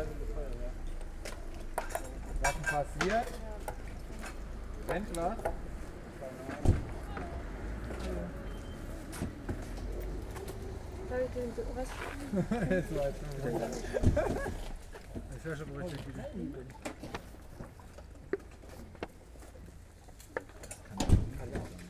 Marktplatz, Manheim, Germany - Klimacamp infostand
info stand for the climate camp activities which take place here in the village Manheim August and September. Manheim is directly affected by the brown coal mining and will probably disappear around 2022. Interesting note: the chief of RWE power, the company who runs the energy and mining business in this area, grew up in this village.
(Sony PCM D50, DPA4060)